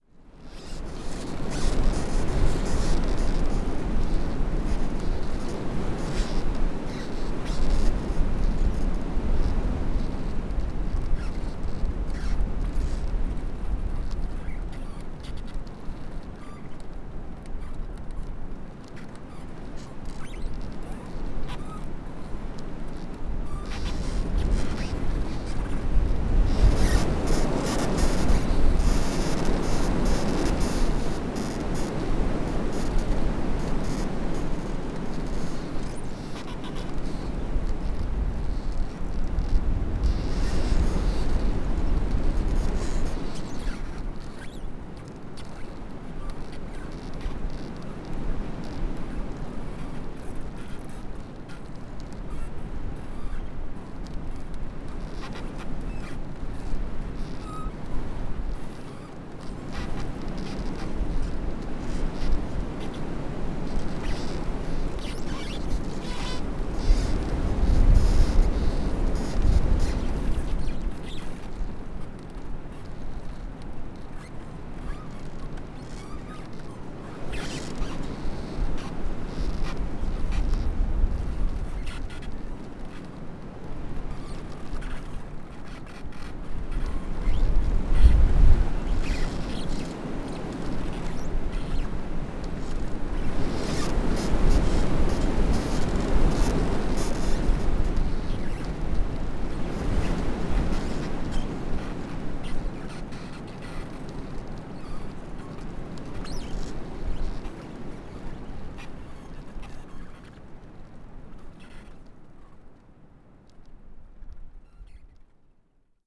lancken-granitz: holunderbaum - the city, the country & me: elder tree
elder tree creaking in the wind
the city, the country & me: march 7, 2013
Amt für das Biosphärenreservat Südost-Rügen, Germany